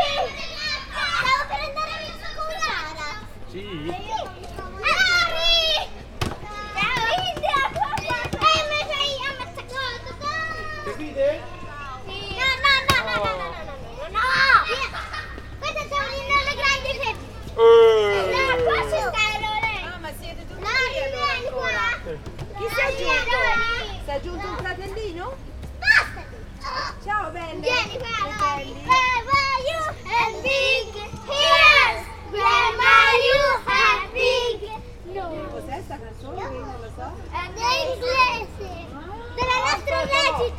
Piazza IV Novembre, Serra De Conti AN, Italia - Toutes Petites Filles qui jouent
Sony Dr 100